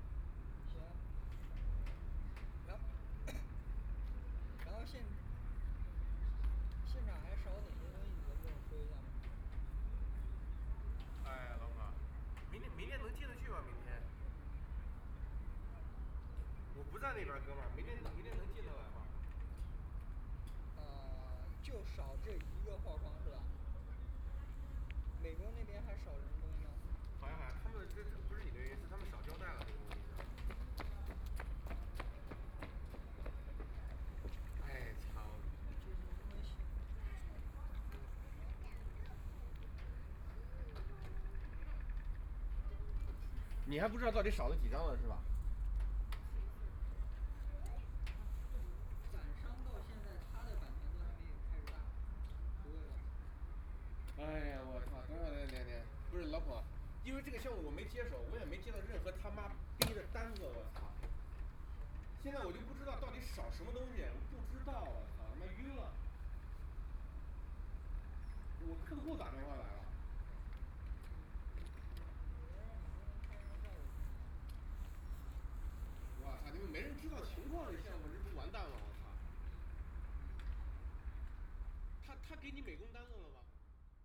power station of art, Shanghai - Outside the museum
Outside the museum, Exhibition of the work of people talking on the phone sounds, Nearby boat traveling through the sound, Binaural recording, Zoom H6+ Soundman OKM II